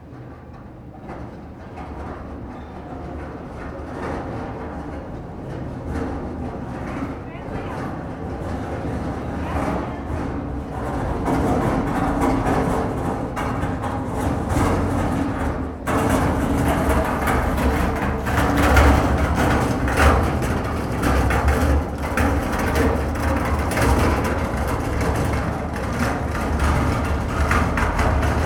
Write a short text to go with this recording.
Pedestrians talking. A worker moves a noisy wagon. Voix de piétons. Une personne déplace un chariot.